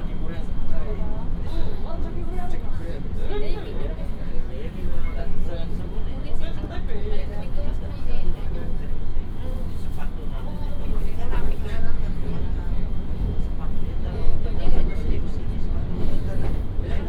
Changhua City, Changhua County - Inside the train compartment
Inside the train compartment, The train arrived, Station message broadcast
Binaural recordings, Sony PCM D100+ Soundman OKM II
17 February 2018, 09:22